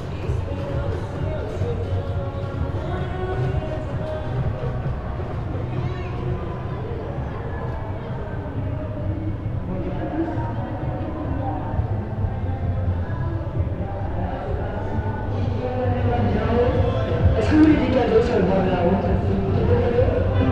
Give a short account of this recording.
Le Tour 'caravan'. An advertising and promotional vehicle cavalcade that precedes the racing cyclists approximately one hour before the actual race itself passes by. Sound bouncing around in between the buildings from the next street, 300m away